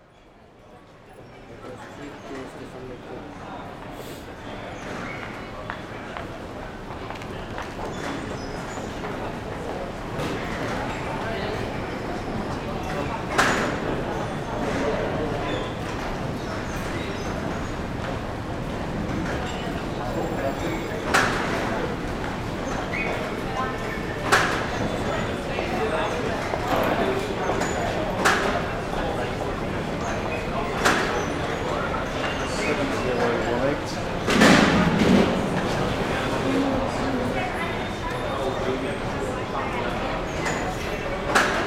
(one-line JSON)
{"title": "Tube station, Bond Street, Londres, Royaume-Uni - Bond Street", "date": "2016-03-16 10:51:00", "description": "Inside the tube station, zoom H6", "latitude": "51.51", "longitude": "-0.15", "altitude": "32", "timezone": "Europe/London"}